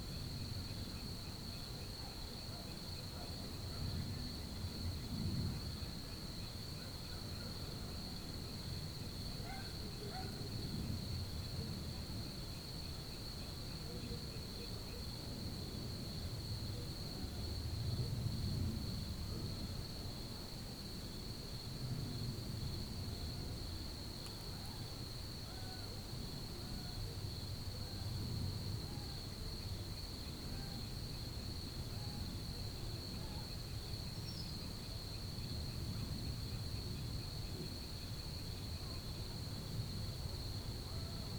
Békés, Hungary - Hometown night ambient
Hometown night ambient
DR-44WL, integrated microphones.
Early night soundscape, birds (phesant and others I don't know), Melolontha melolontha, small frogs(?) lurking around.
Dog barking and passing cars.
The location is approximate due privacy concerns.